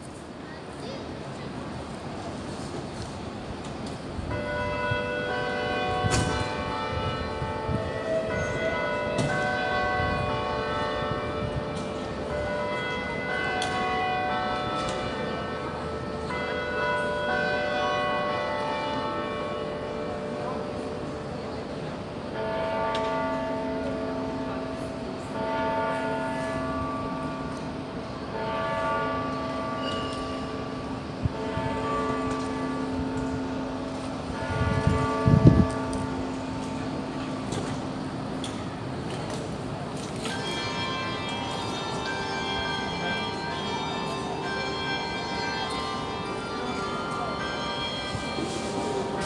Oslo: The carillon in the Oslo City Hall’s bell tower.
Norge, August 2019